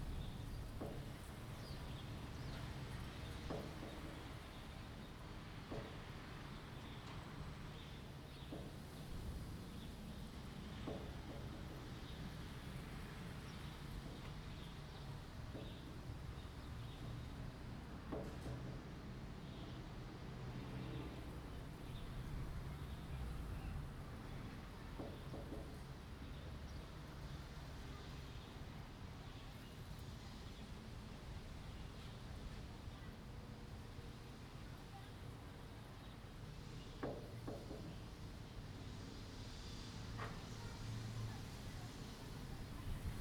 Birds singing, Wind, In the village square
Zoom H2n MS+XY